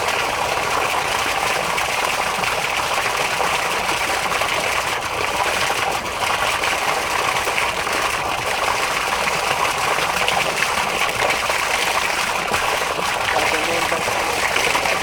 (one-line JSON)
{"title": "Śródmieście Północne, Warszawa - Fontanna Palac Kultury i Nauki (b)", "date": "2013-08-20 13:09:00", "description": "Fontanna Palac Kultury i Nauki (b), Warszawa", "latitude": "52.23", "longitude": "21.01", "altitude": "135", "timezone": "Europe/Warsaw"}